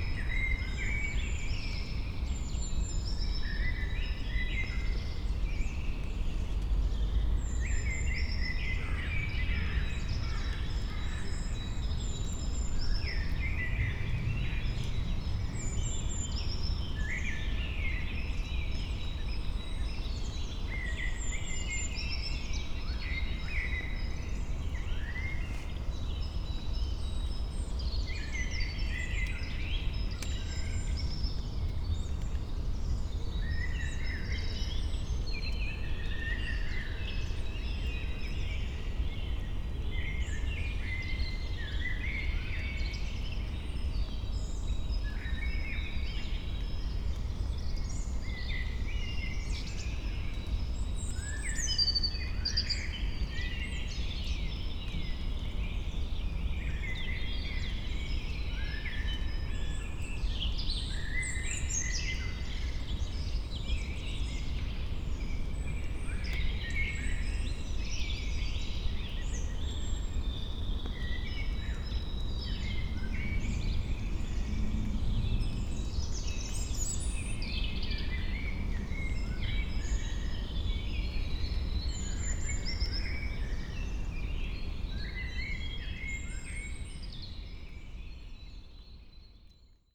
Niedertiefenbach, Beselich, Deutschland - forest ambience
early spring, cold and wet, evening forest ambience, woodpeckers, unavoidable aircraft. airtraffic has increased a lot in this area because of the near-by Frankfurt International airport about 80km away, planes are present all day and night. a pity and very unpleasant.
(Sony PCM D50, Primo EM172)
28 March 2016, 17:45